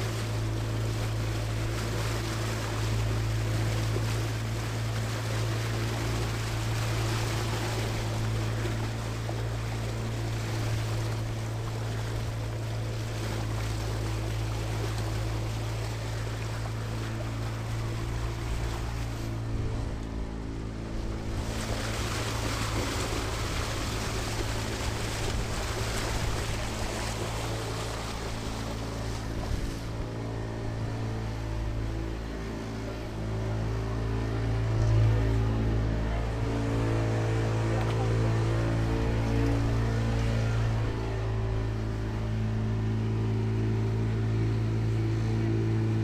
surrounded by sand, wather, camels and some hidden crocodiles